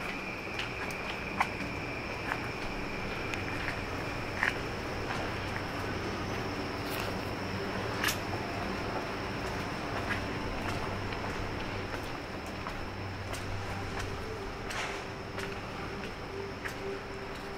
Nishiikebukuro, Toshima City, Tokyo, Japan - Night
日本